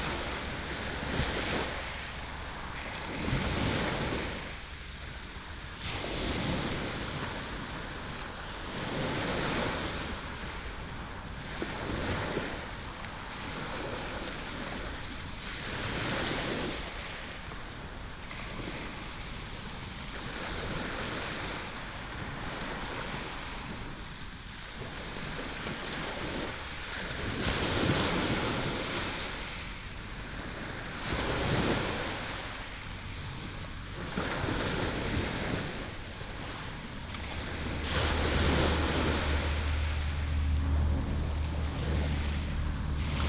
Sound of the waves, at the beach, traffic sound
Pingtung County, Taiwan, 24 April 2018